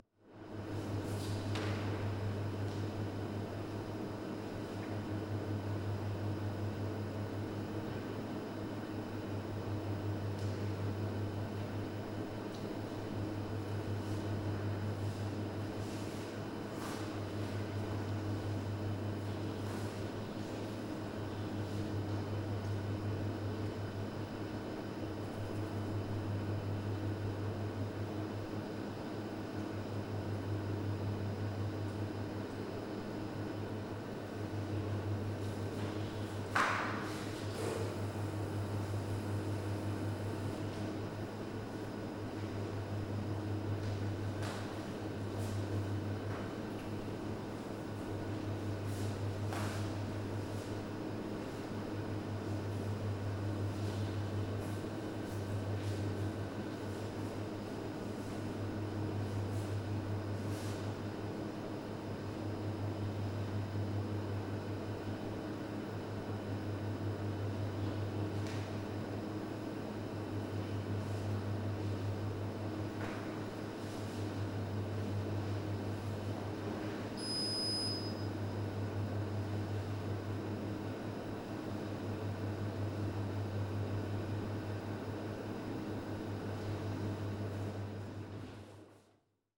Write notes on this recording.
Recorded inside the reception hall with a Zoom h5. Recorder sitting approx 2 meters high on a ledge. Vending machine has a nice phasing hum.